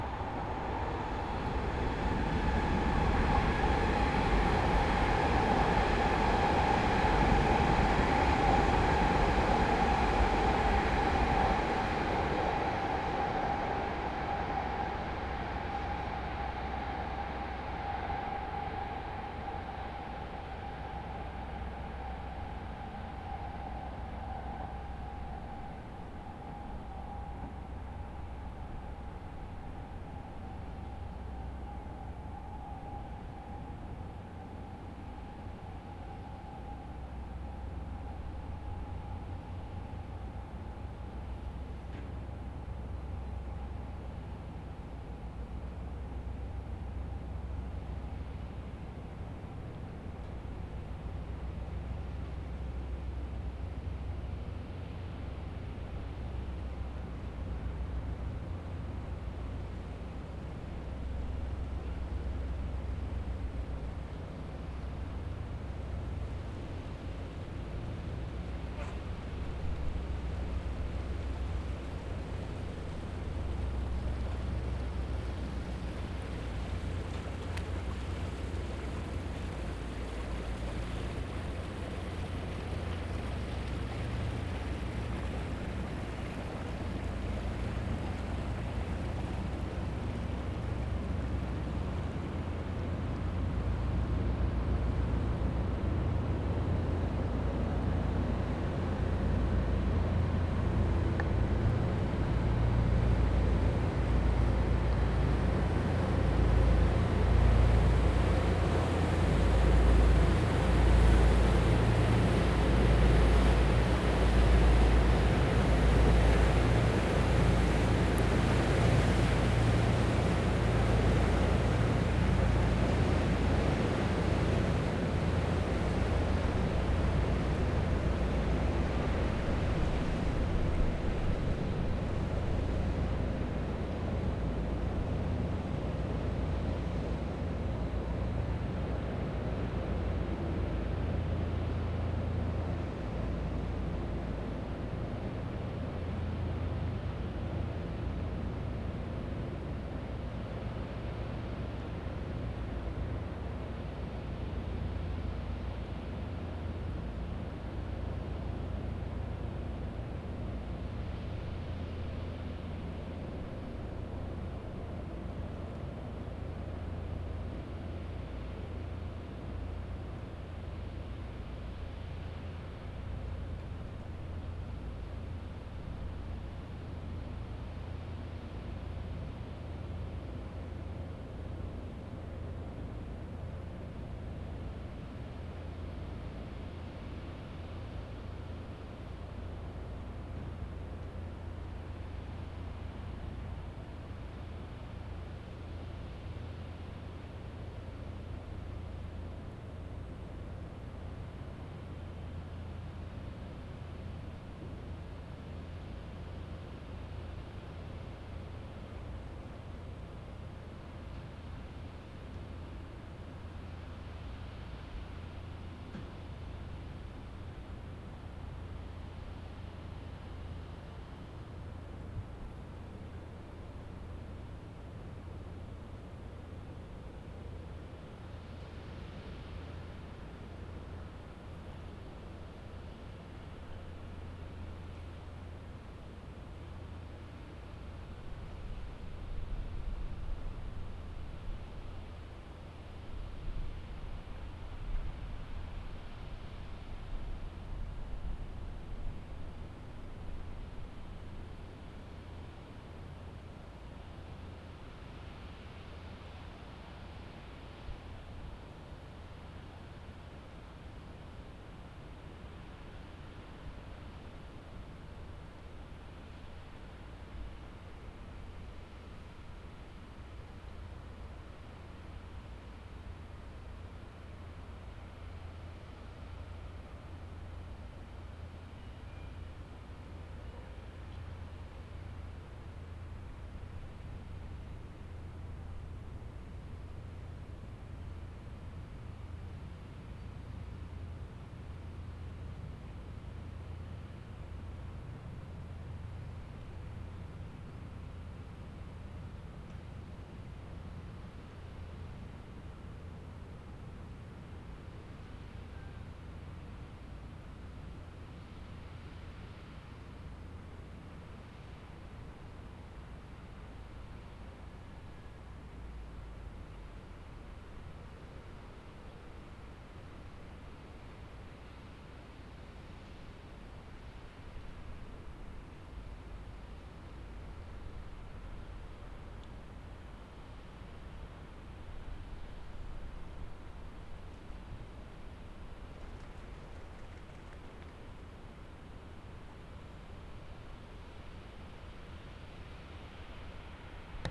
Amercoeur, Charleroi, België - Amercoeur
Sitting at the canal near Amercoeur power plant. A train passes, then a jogger, a cyclist, a boat and finally a cormorant.